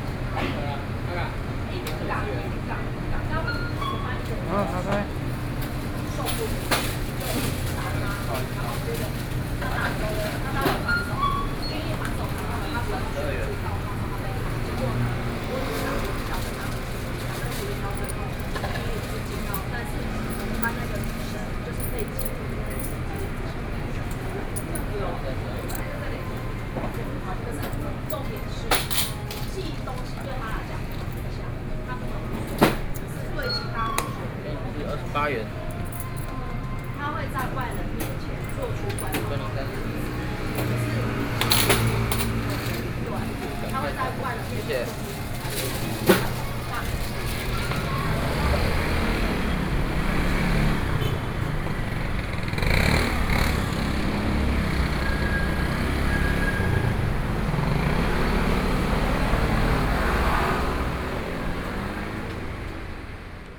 Taoyuan - Checkout
in the Convenience stores, Sony PCM D50 + Soundman OKM II